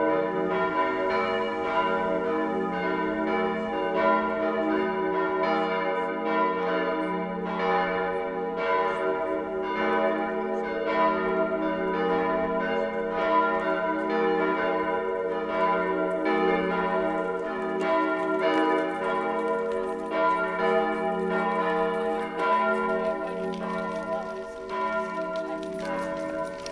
berlin, nansen/pflügerstr. - sunday evening churchbells
sunday evening churchbells from nearby reuterplatz
Berlin, Germany